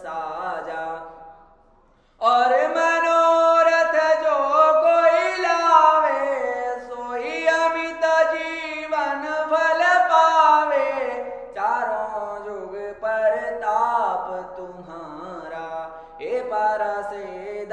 Jaisalmer, Gadisar lake temple
gadisar lake temple sur le tournage de RANI
9 December 2010, 14:45